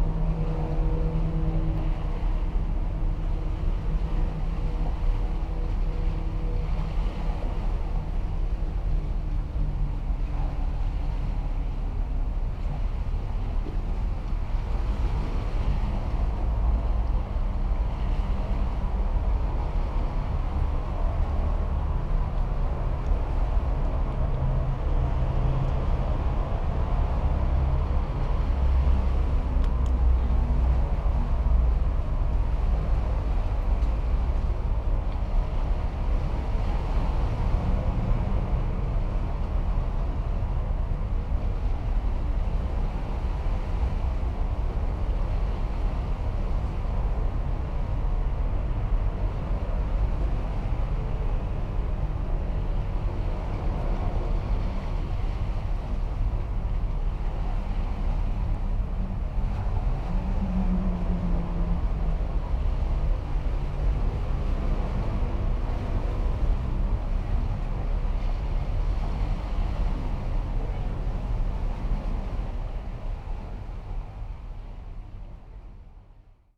Viale Miramare, Trieste, Italy - sea roar
seashore area heard from metal tube of a traffic sign
7 September 2013